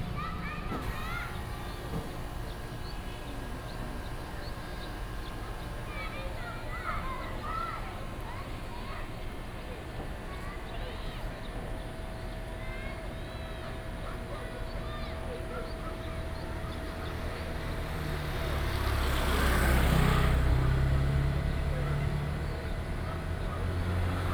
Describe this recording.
Next to the beach, Traffic Sound